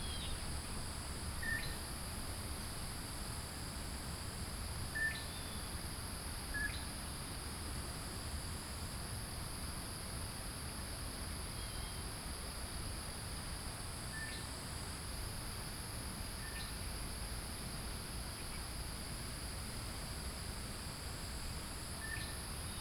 桃米巷, 桃米里, Taiwan - Birdsong
Birds call, The sound of water streams